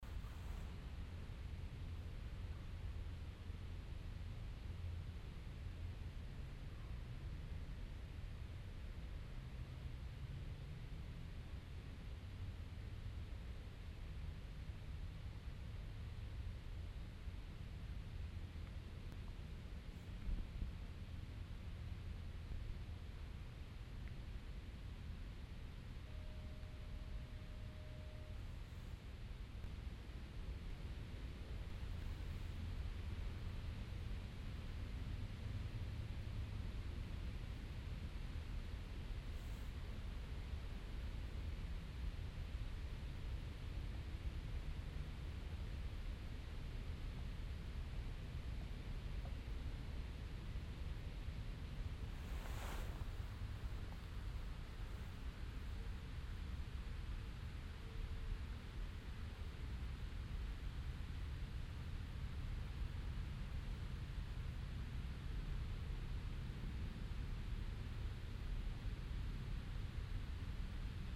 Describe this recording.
within the grouse mountain forest, sitting on an old tree, listening to the silence, soundmap international, social ambiences/ listen to the people - in & outdoor nearfield recordings